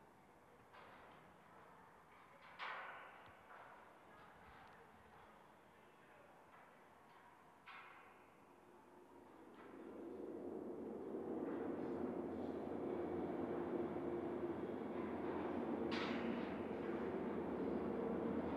City of Brussels, Belgium - Listening to trains through a metal fence and contact microphones
I attached two contact microphones (both made by Jez Riley French) onto the metal fence at the back of the skateboarding park, in order to listen to the vibrations of trains passing. The metal fence collects many other environmental sounds, so that as you stand and listen to the contact microphones you hear not only the trains but also the atmosphere of the skateboarding park.
Région de Bruxelles-Capitale - Brussels Hoofdstedelijk Gewest, België - Belgique - Belgien, European Union, 27 March 2013